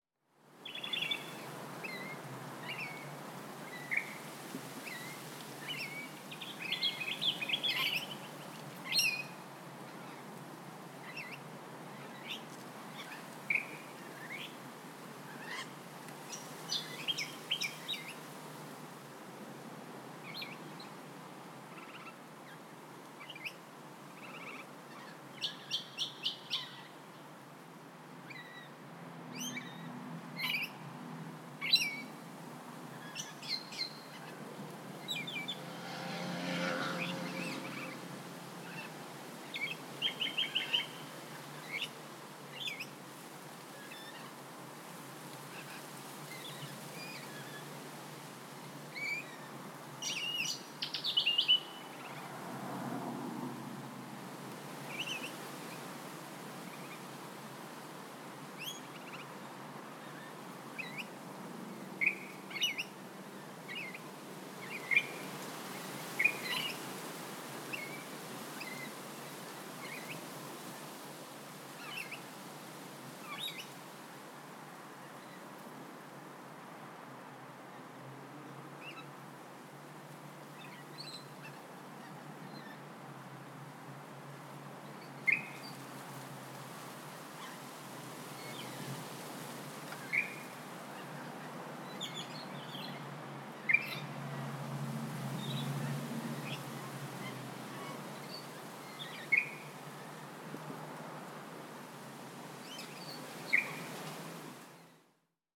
Rainbow Lorikeets, North Fremantle WA, Australia - Rainbow Lorikeets and Other Birds - typical suburban soundscape for the area.
Captured this on lunch break. These sounds are very familiar to locals.
The local population of rainbow lorikeets came from a local university that had a selection in captivity, but they escaped. That small nucleus of birds bred and bred and now they are very common, and a huge pest to orchards and farms. They are, however, objectively stunning.
This tree had several species of birds in it, but I couldn't keep my eyes off a pair of rainbow lorikeets, sitting atop the tree.
Recorded with a Zoom h2n, with a zoom wind jammer fitted. I stuck it on a selfie stick and held it up to get closer to the birds. ATH-MX40 headphones. XY Mode (I'm experimenting with XY mode, rather than MS at the moment)